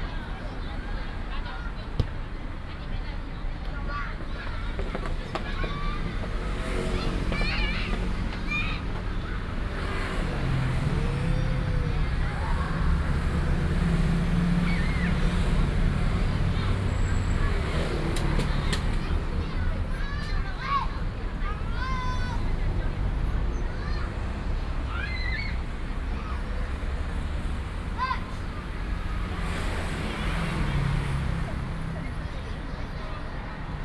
Chaoma Rd., Xitun Dist., Taichung City - Next to the football field
Next to the football field, Many kids are playing football, traffic sound, Binaural recordings, Sony PCM D100+ Soundman OKM II
Taichung City, Taiwan